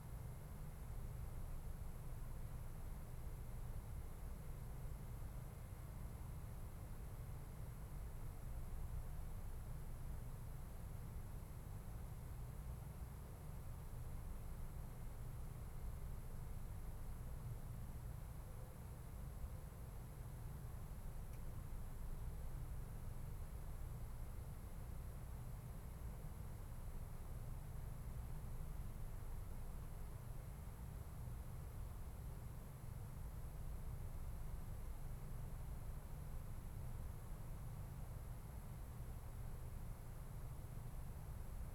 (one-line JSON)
{"title": "Ascolto il tuo cuore, città. I listen to your heart, city. Several chapters **SCROLL DOWN FOR ALL RECORDINGS** - Easter Stille Nacht 433 in the time of COVID19 Soundscape", "date": "2020-04-13 03:34:00", "description": "\"Easter Stille Nacht 4'33\" in the time of COVID19\" Soundscape\nChapter LXIII of Ascolto il tuo cuore, città. I listen to your heart, city\nMonday April 13th 2020. Fixed position on an internal terrace at San Salvario district Turin, thirty four days after emergency disposition due to the epidemic of COVID19.\nStart at 3:34 a.m. end at 3:39 a.m. duration of recording 4’33”", "latitude": "45.06", "longitude": "7.69", "altitude": "245", "timezone": "Europe/Rome"}